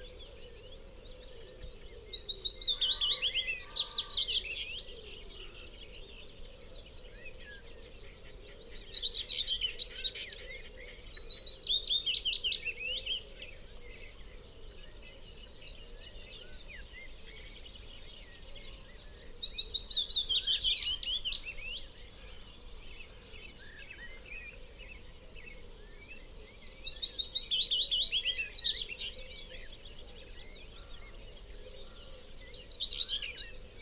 Green Ln, Malton, UK - willow warbler song soundscape ...
willow warbler song soundscape ... dpa 4060s to Zoom F6 ... mics clipped to twigs ... bird calls ... song ... from ... linnet ... great tit ... red -legged partridge ... pheasant ... yellowhammer ... whitethroat ... chaffinch ... blackbird ... wood pigeon ... crow ... some background noise ...